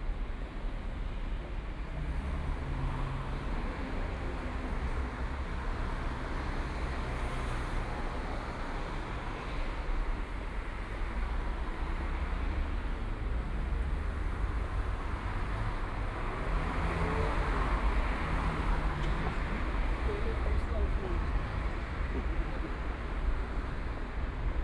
walking in the Street, Police car, Traffic Sound, Footsteps